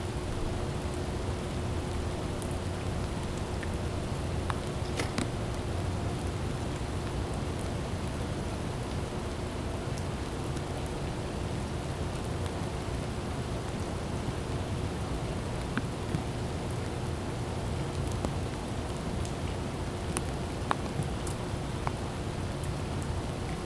Muhlenberg College Hillel, West Chew Street, Allentown, PA, USA - Muhlenberg College Prosser Entrance
Recorded at the entrance to a Freshman dorm building, it was raining.
2 December, 20:30